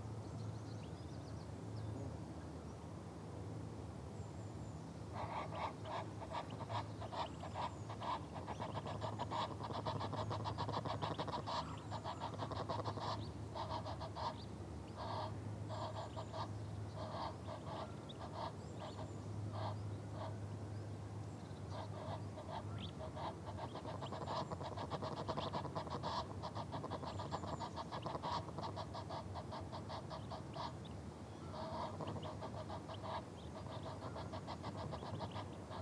This is the sound of two Egyptian geese. One of them was standing on top of the other one, then they started honking in chorus which drew the attention of a very angsty male swan who immediately flew over to check on the source of the noise.
Reading, UK, 12 April 2017